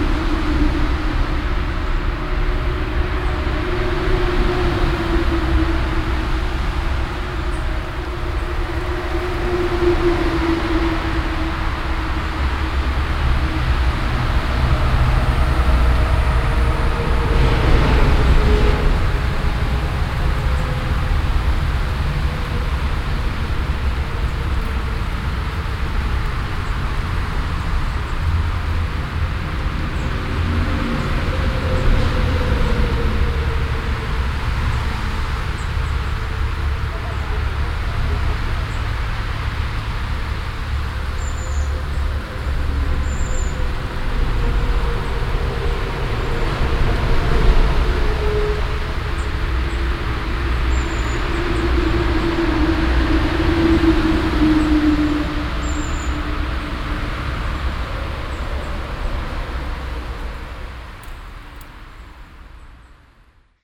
essen, emscherstraße, unter autobahnbrücke
Verkehrsgeräusche der Autobahn an Brücke über Zufahrtsstrasse zur Schurenbachhalde, vormittags
Projekt - Stadtklang//: Hörorte - topographic field recordings and social ambiences
8 November 2008, 16:16